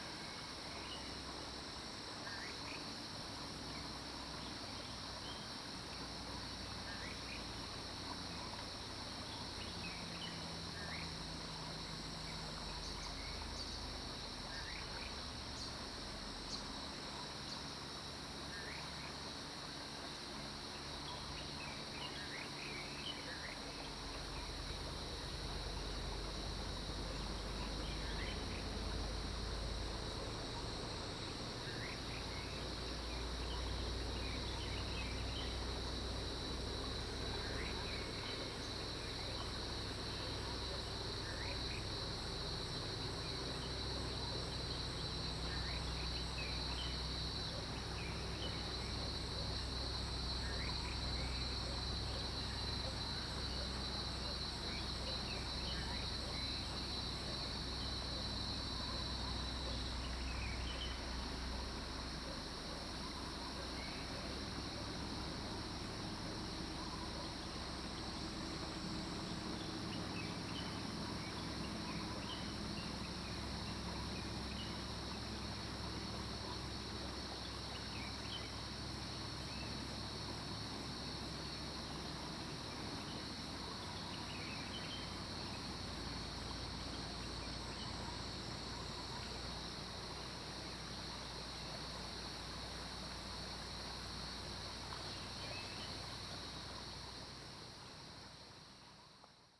中路坑, 埔里鎮桃米里 - Bird calls
Crowing sounds, Bird calls, Early morning, Stream
Zoom H2n MS+XY